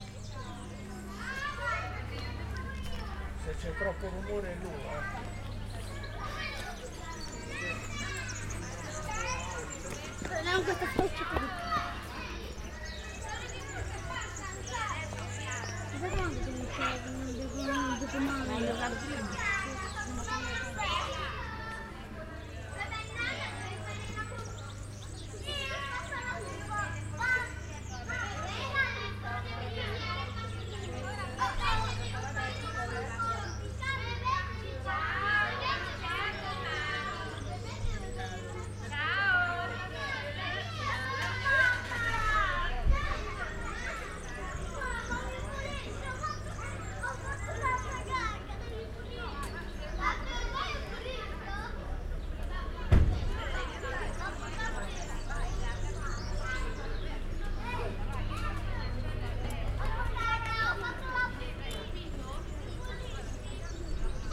Piazza IV Novembre, Serra De Conti AN, Italia - Kids playing in the garden
You can hear some kids playing in the garden and their mothers talking aswell.
(binaural: DPA into ZOOM H6)